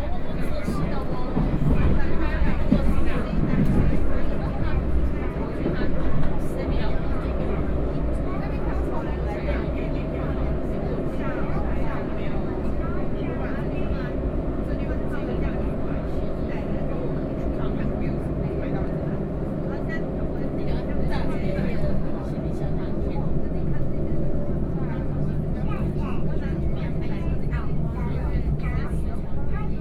鎮安村, Linbian Township - Chu-Kuang Express
Chu-Kuang Express, fromZhen'an Station to Linbian Station